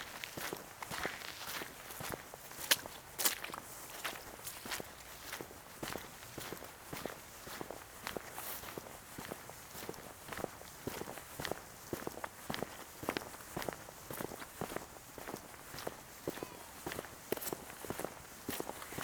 Wet zone, Pavia, Italy - a walk through the wetzone
Sunny and warm fist of november, walking through the wetzones after a full day of rain the day before. walk on path, then in the wood over a bed of dead leaves, crossing muddy zones and several puddles.
1 November, 15:38